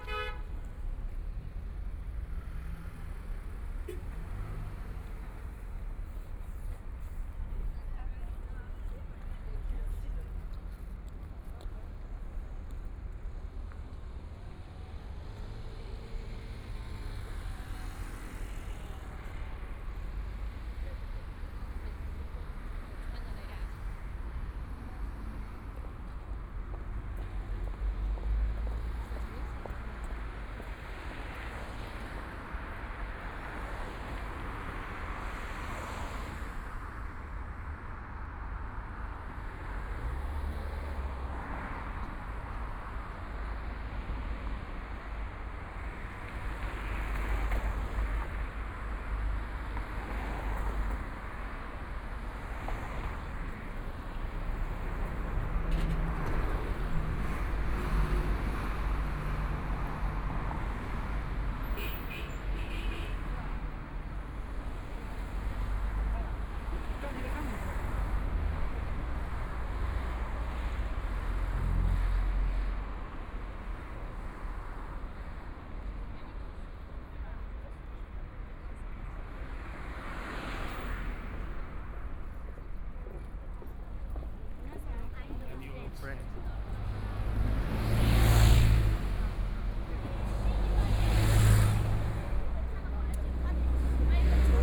Hongkou District, Shanghai - soundwalk
Walking on the road, Binaural recording, Zoom H6+ Soundman OKM II